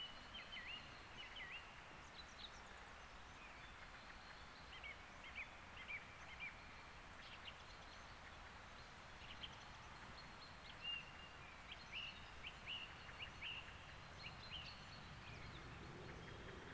Wickersheller Brücke, Oestrich-Winkel, Deutschland - Wintermorgen im Ersnt-Bach-Tal